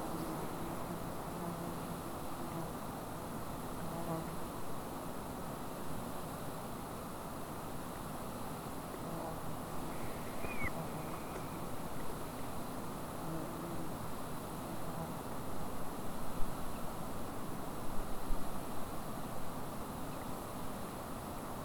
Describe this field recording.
Report of a Ruger MKII across alpine lake, call of an eagle, bees.